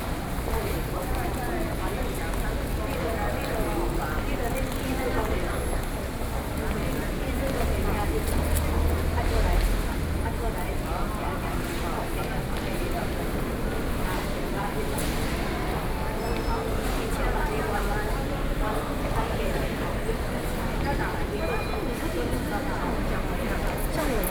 台大醫院, Taipei City - in the hospital
Waiting on payment and medicine cabinet stage, High-ceilinged hall, (Sound and Taiwan -Taiwan SoundMap project/SoundMap20121129-8), Binaural recordings, Sony PCM D50 + Soundman OKM II
Taipei City, Taiwan, 29 November 2012